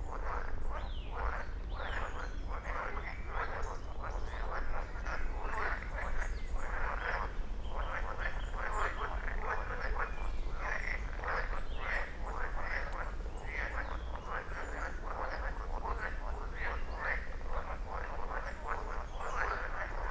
Königsheide, Berlin - frog concert
Berlin Königsheide, remains of an ancient forest, little pond with many frogs, and many Long-tailed tits (german: Schwanzmeise) in the oak trees.
(Sony PCM D50, Primo EM172)
Berlin, Germany, 4 June 2018